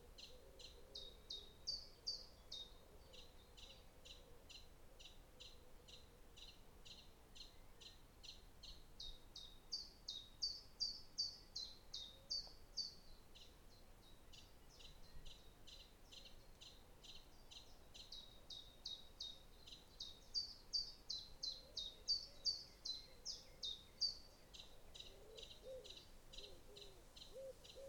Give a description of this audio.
I'm no birder but I think that this is a Chiff Chaff enjoying the sunshine at the height of Spring, during the Covid-19 Lockdown in Norfolk in the UK. Recording made by sound artist Ali Houiellebecq.